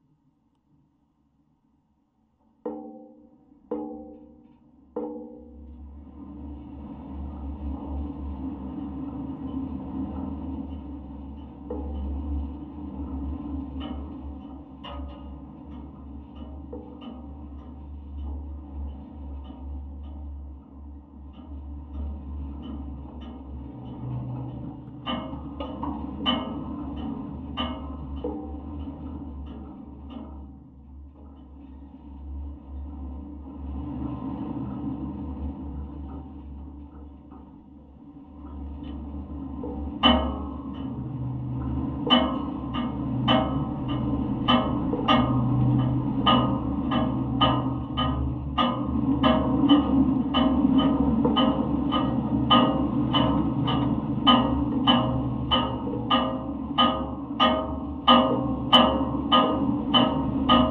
Nant, France - Wind and antenna
Wind in an antenna slams the line. Recorded with a mono contact microphone.